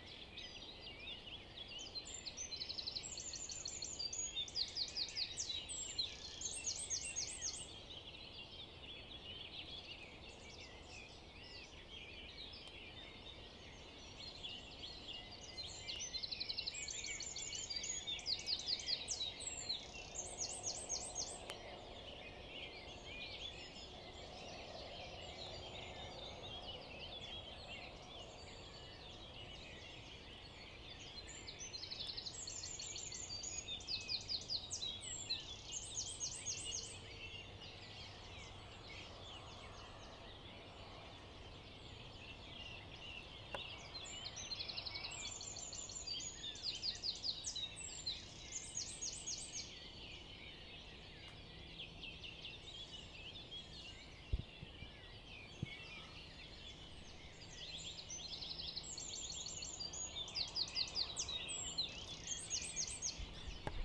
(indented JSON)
{
  "title": "Unnamed Road, Neuenburg am Rhein, Deutschland - Vogelkonzert",
  "date": "2019-05-07 04:16:00",
  "description": "Morgendliches Vogelstimmenkonzert am Rhein",
  "latitude": "47.89",
  "longitude": "7.57",
  "altitude": "203",
  "timezone": "Europe/Berlin"
}